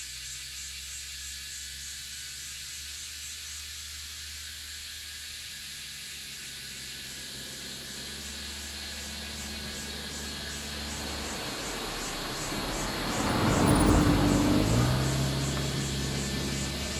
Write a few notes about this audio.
Cicada sounds, Bird sounds, Zoom H2n MS+XY